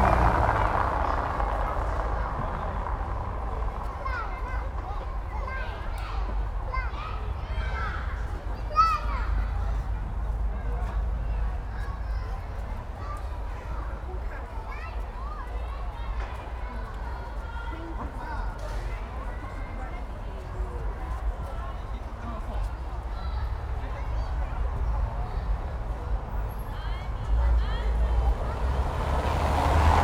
Leuschnerdamm, Berlin, Deutschland - cobblestones and childrens playground
A Berlin city music:
radial tires on cobblestones passing the children's playground.